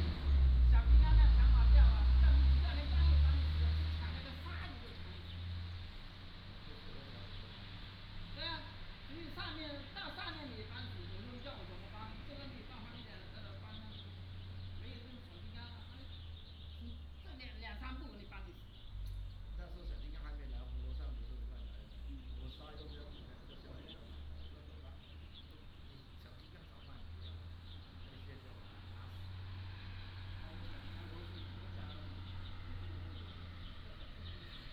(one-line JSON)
{"title": "津沙村, Nangan Township - Small village", "date": "2014-10-14 12:54:00", "description": "Small village, Ancient settlement, Birdsong, Traffic Sound, Sound of the waves", "latitude": "26.15", "longitude": "119.91", "altitude": "16", "timezone": "Asia/Taipei"}